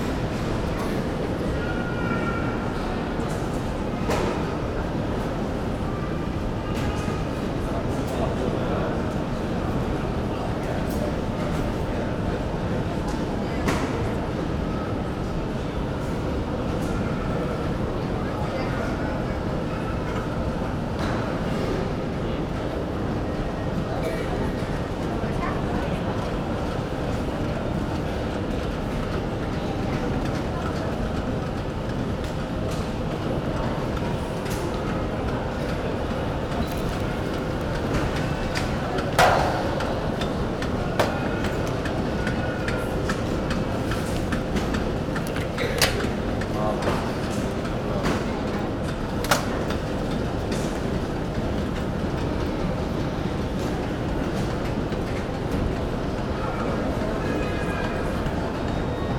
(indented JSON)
{
  "title": "Heraklion Airport, Heraklion, Crete - baggage belt",
  "date": "2012-09-24 02:41:00",
  "description": "ambience of the luggage hall. passengers picking up their bags. mic close to the baggage conveyor belt.",
  "latitude": "35.34",
  "longitude": "25.17",
  "altitude": "36",
  "timezone": "Europe/Athens"
}